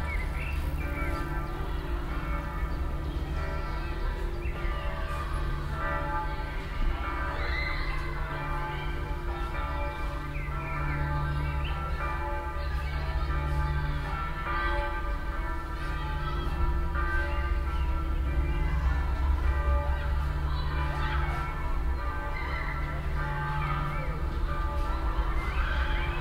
osnabrück, schlosspark, schüler und glocken
project: social ambiences/ listen to the people - in & outdoor nearfield recordings